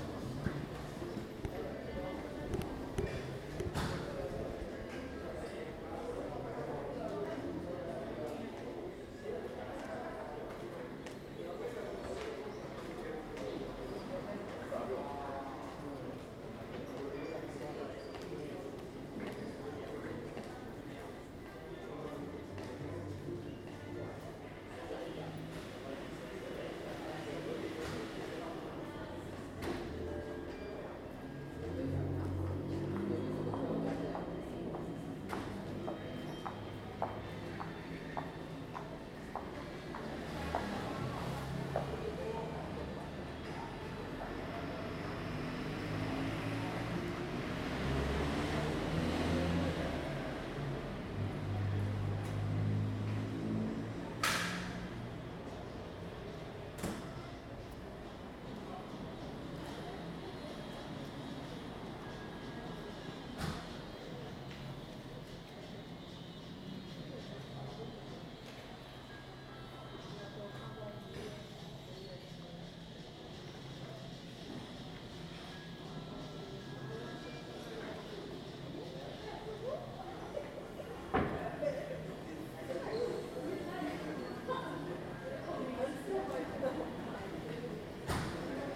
Av. Álvaro Ramos - Quarta Parada, São Paulo - SP, Brasil - hall Sesc
captação estéreo com microfones internos